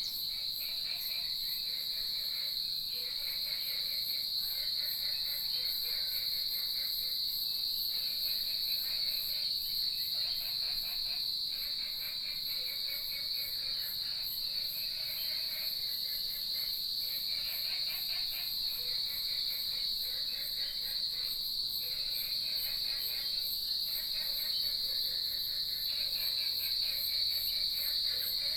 埔里鎮桃米里, Nantou County - Early morning
Frogs chirping, Bird calls, Cicadas cry, Chicken sounds